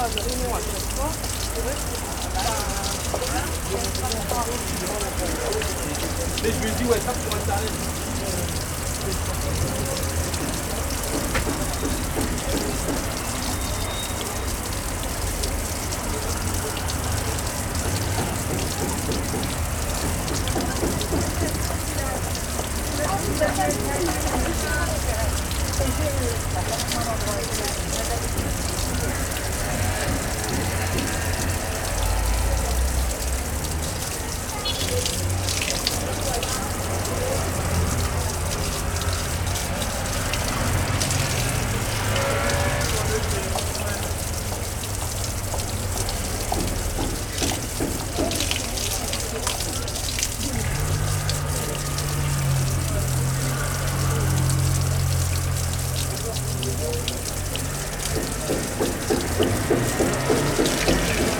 Faubourg St Antoine Paris
Fontaine eau potable à langle de la rue de Charonne et du faubourg St Antoine - Paris
Paris, France, 6 April, ~15:00